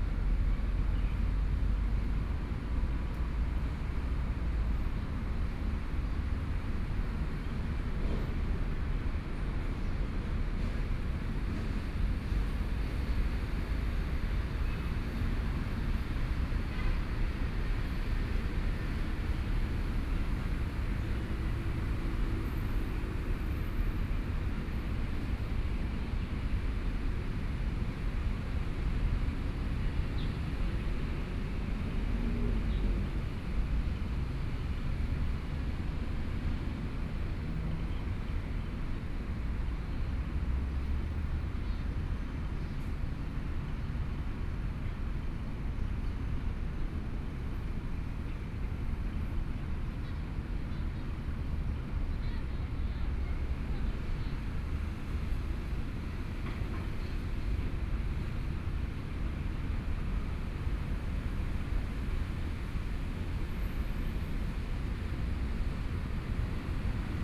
中正公園, Kaohsiung City - in the Park
in the Park, Traffic Sound, The weather is very hot
Kaohsiung City, Taiwan